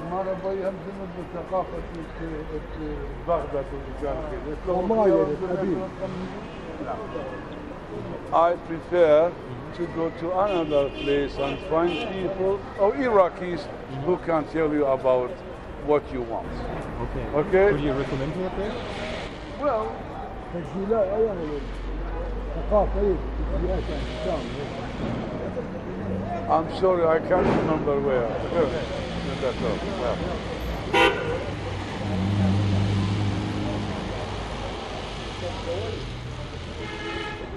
:jaramanah: :at the concrete skeleton: - ten
Syria, October 2008